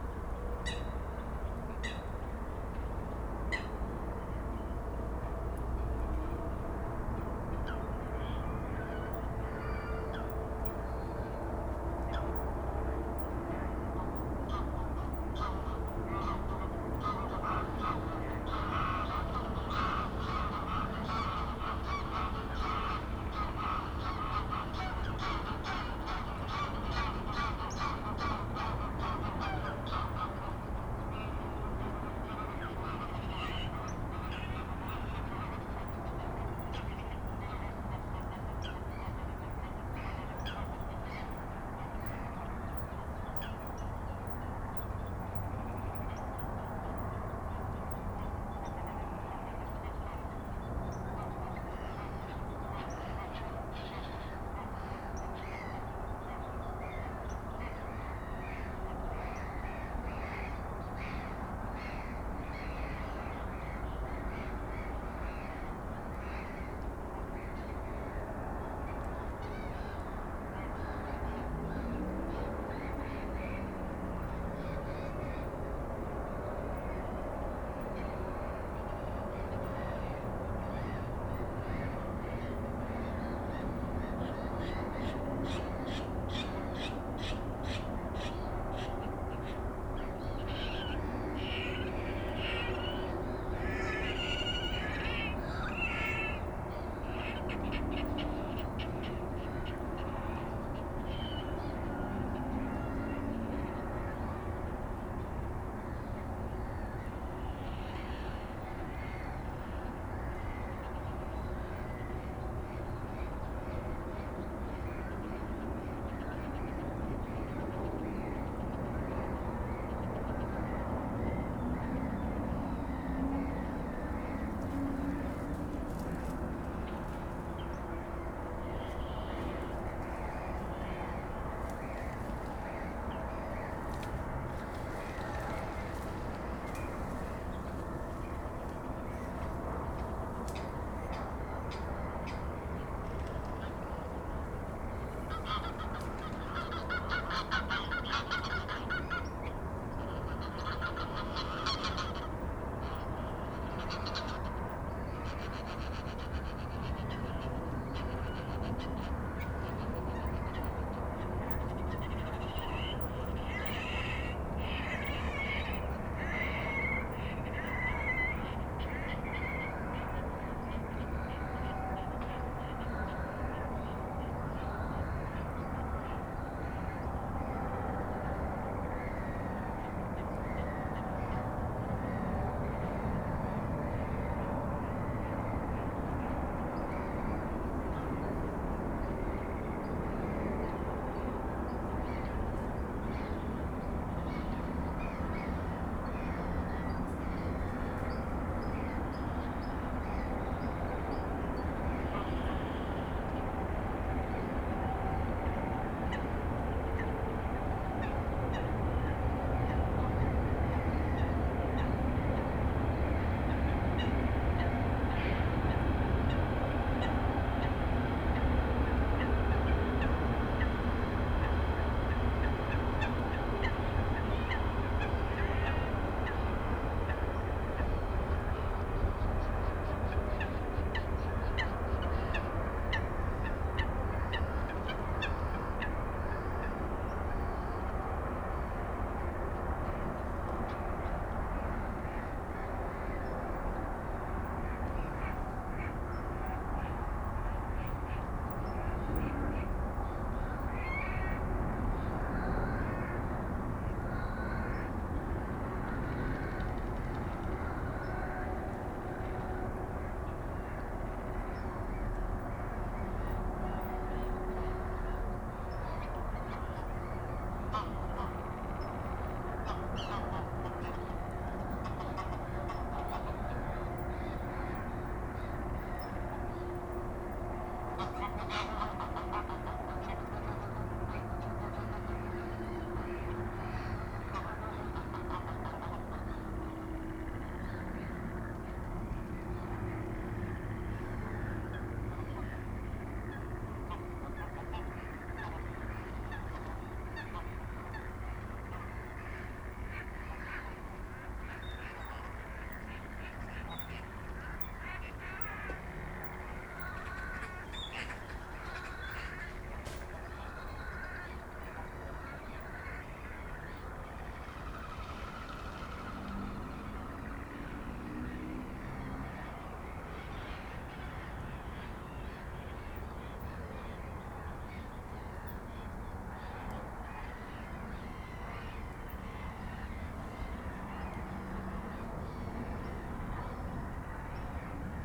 Moorlinse, Berlin-Buch, Deutschland - pond ambience at night
at night at the Moorlinse pond, heavy impact of the nearby Autobahn ring, I have hoped for wind from north/east, which would silence traffic noise... however, many bids, bats, frogs and two passing-by S-Bahn trains, among other sounds.
(SD702, DPA4060)